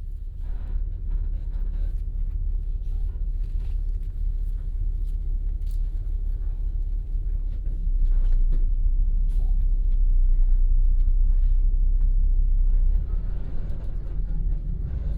Zhongshan 3rd Rd., Puli Township - Inside the bus
Inside the bus